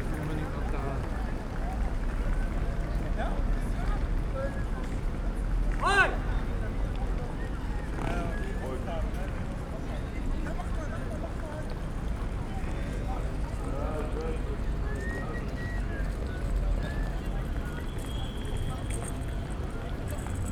{
  "title": "Hbf Bremen - square ambience",
  "date": "2014-09-14 20:35:00",
  "description": "Bremen Hbf, main station, Sunday evening ambience on square\n(Sony PCM D50, DPA4060)",
  "latitude": "53.08",
  "longitude": "8.81",
  "altitude": "9",
  "timezone": "Europe/Berlin"
}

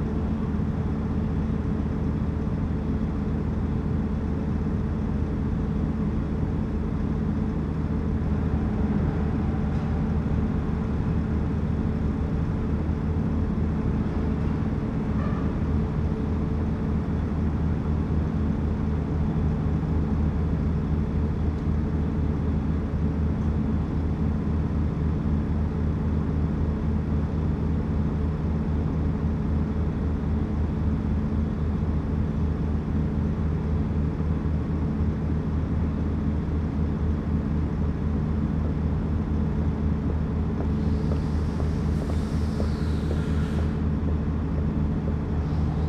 {
  "title": "berlin: friedelstraße - the city, the country & me: sewer works",
  "date": "2013-11-01 09:50:00",
  "description": "generator of a mobile concrete plant\nthe city, the country & me: november 1, 2013",
  "latitude": "52.49",
  "longitude": "13.43",
  "altitude": "46",
  "timezone": "Europe/Berlin"
}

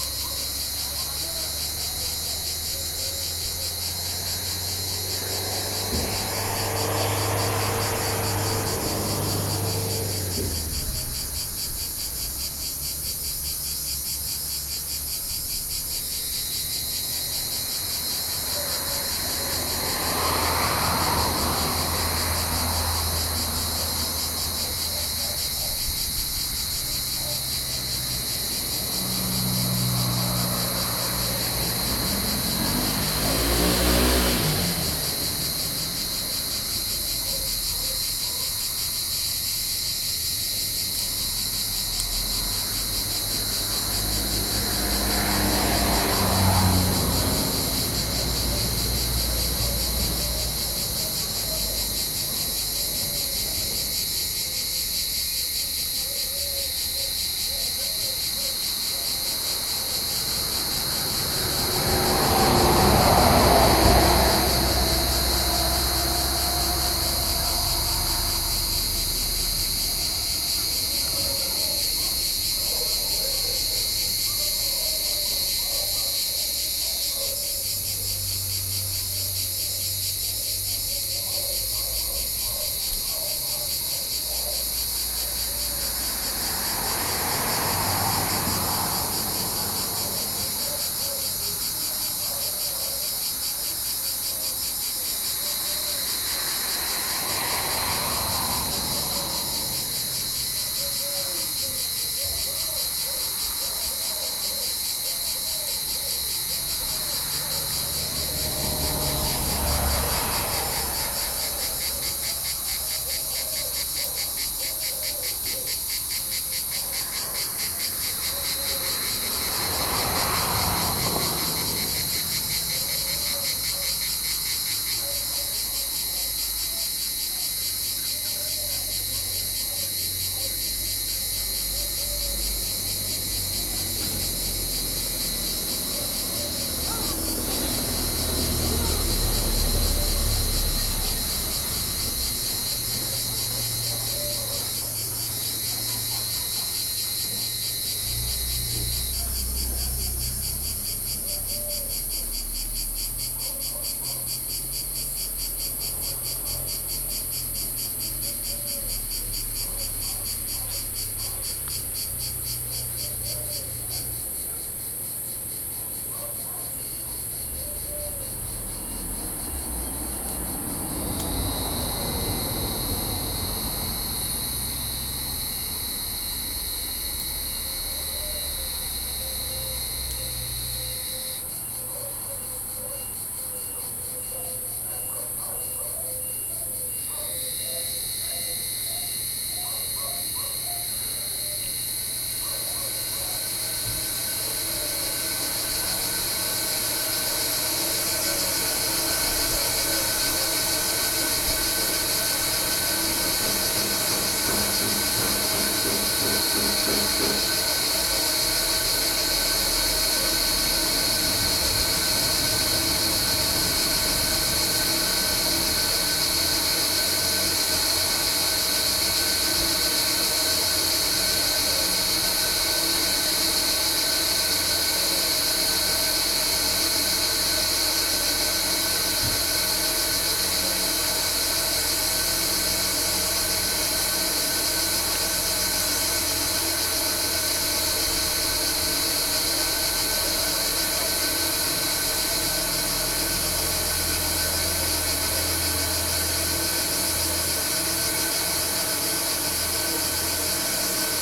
{
  "title": "lapta - water cistern",
  "date": "2017-08-02 11:39:00",
  "description": "huge water cistern on the hill above Lapta",
  "latitude": "35.34",
  "longitude": "33.16",
  "altitude": "94",
  "timezone": "Asia/Nicosia"
}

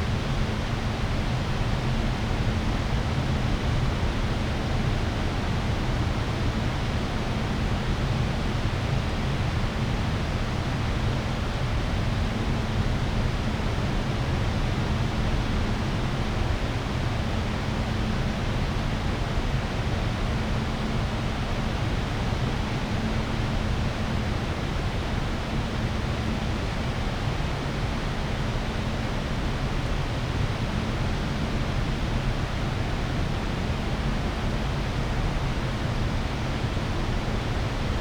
{
  "title": "burg/wupper: waldweg - the city, the country & me: forest track",
  "date": "2013-04-26 13:07:00",
  "description": "weir of sewage treatment plant, airplane passing\nthe city, the country & me: april 26, 2013",
  "latitude": "51.14",
  "longitude": "7.13",
  "altitude": "132",
  "timezone": "Europe/Berlin"
}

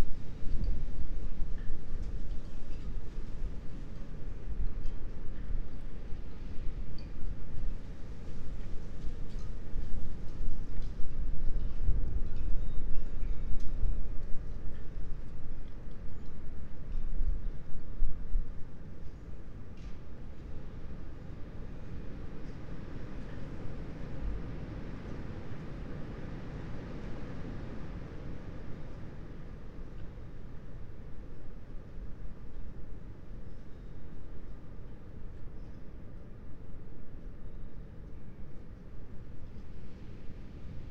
{
  "date": "2022-02-18 23:24:00",
  "description": "23:24 Berlin Bürknerstr., backyard window - Hinterhof / backyard ambience",
  "latitude": "52.49",
  "longitude": "13.42",
  "altitude": "45",
  "timezone": "Europe/Berlin"
}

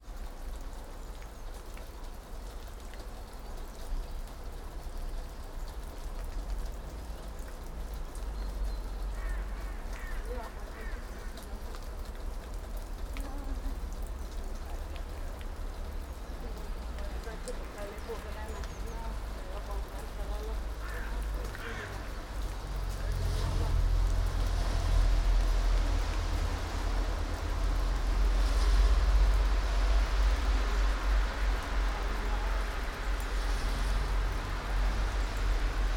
{
  "title": "all the mornings of the ... - feb 26 2013 tue",
  "date": "2013-02-26 10:00:00",
  "latitude": "46.56",
  "longitude": "15.65",
  "altitude": "285",
  "timezone": "Europe/Ljubljana"
}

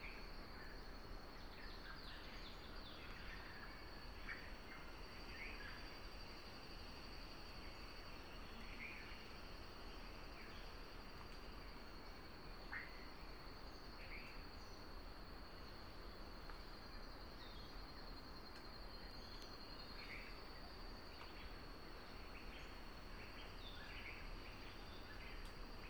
{
  "title": "Waterfall track, Abaca, Fidschi - Forest in Fiji in the morning",
  "date": "2012-06-05 09:10:00",
  "description": "Soundscape of a remnant of rainforest east of the village of Abaca. The dummy head microphone facing south. Around 3 min in the recording a Giant Forest Honeyeater (Gymnomyza brunneirostris) can be heard calling. One of Fijis endemic birds. Recorded with a Sound Devices 702 field recorder and a modified Crown - SASS setup incorporating two Sennheiser mkh 20 microphones.",
  "latitude": "-17.67",
  "longitude": "177.55",
  "altitude": "714",
  "timezone": "Pacific/Fiji"
}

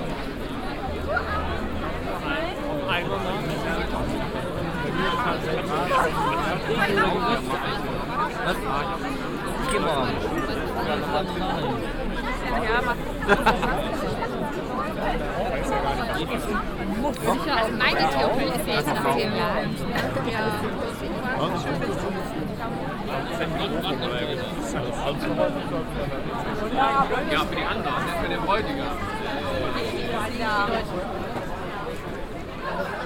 {
  "title": "cologne, altstadt, alter markt, weihnachtsmarkt",
  "date": "2008-12-23 17:47:00",
  "description": "abendliche ambience des traditionellen weihnachtsmarktes auf dem kölner alter markt\nsoundmap nrw - weihnachts special - der ganz normale wahnsinn\nsocial ambiences/ listen to the people - in & outdoor nearfield recordings\nsoundmap nrw - weihnachts special - der ganz normale wahnsinn\nsocial ambiences/ listen to the people - in & outdoor nearfield recordings",
  "latitude": "50.94",
  "longitude": "6.96",
  "altitude": "58",
  "timezone": "Europe/Berlin"
}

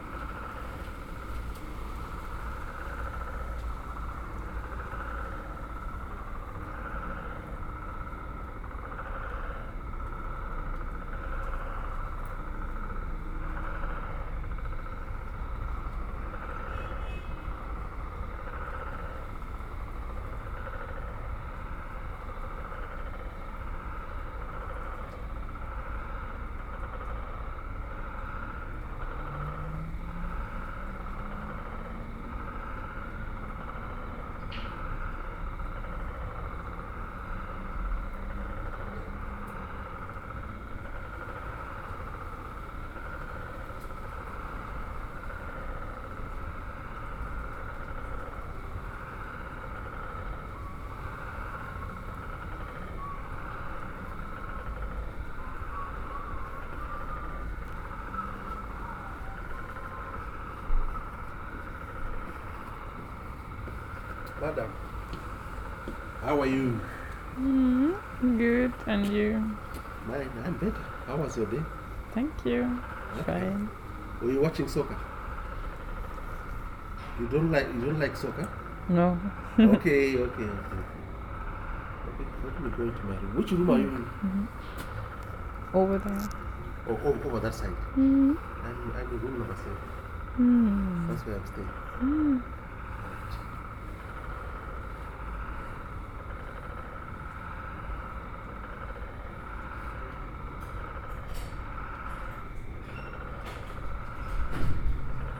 {
  "title": "Broads Rd, Lusaka, Zambia - Lusaka evening with toads...",
  "date": "2018-06-13 19:40:00",
  "description": "listening out into the urban hum around the backpackers...",
  "latitude": "-15.41",
  "longitude": "28.29",
  "altitude": "1279",
  "timezone": "Africa/Lusaka"
}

